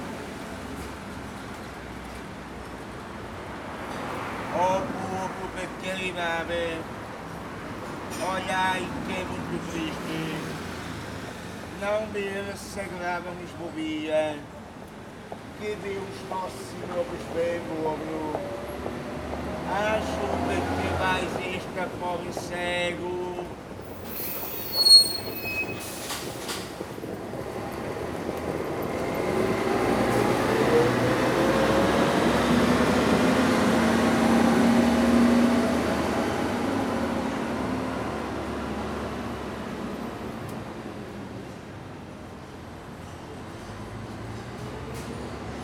Porto, Rue Alexandro Herculano - homeless chant
a homeless man chanting in front of a cafe. buses leaving and arriving at the bus depot nearby.
Porto, Portugal, 30 September 2013